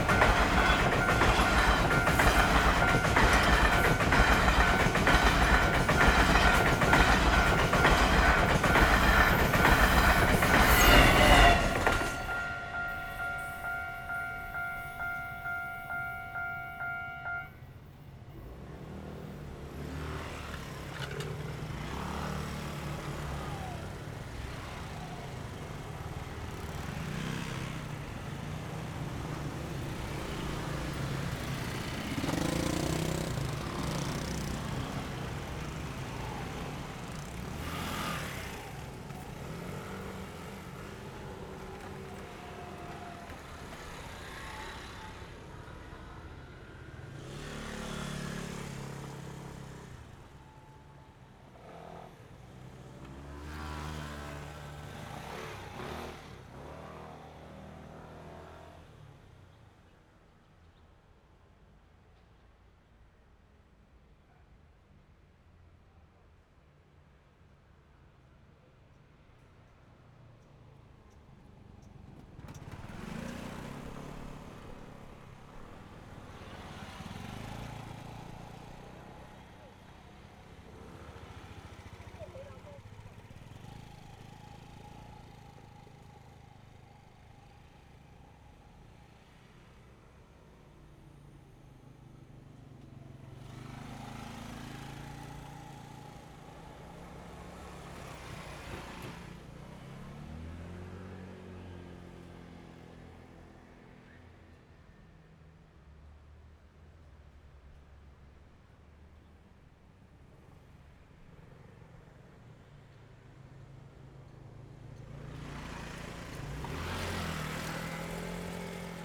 中山東路一段223巷129弄, Zhongli Dist. - the train passes by
Small Railway crossings, motorcycle sound, the train passes by, Binaural recordings, Zoom H6 XY